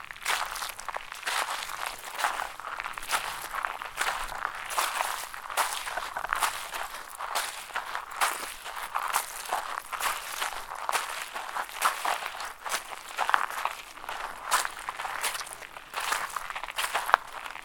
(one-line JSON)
{"title": "Keeler, CA, USA - Walking in Bacterial Pond on Owens Lake", "date": "2022-08-25 11:00:00", "description": "Metabolic Studio Sonic Division Archives:\nWalking on edge of bacterial pond on Owens Dry Lake. Recorded with Zoom H4N recorder", "latitude": "36.45", "longitude": "-117.91", "altitude": "1085", "timezone": "America/Los_Angeles"}